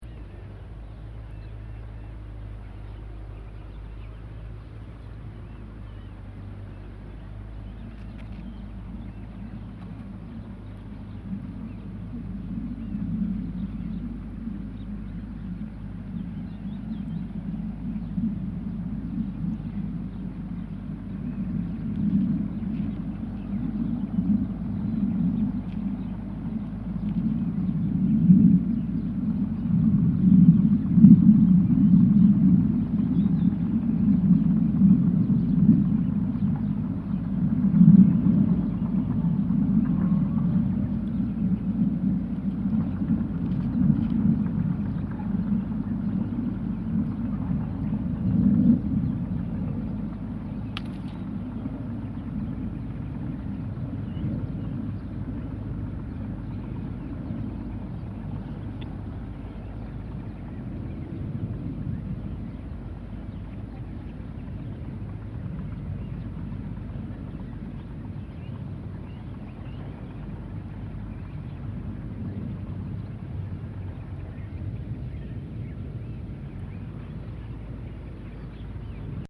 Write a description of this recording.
Rio em Barca dAlva, Portugal. Mapa Sonoro do Rio Douro Douro, Barca dAlva Douro River Sound Map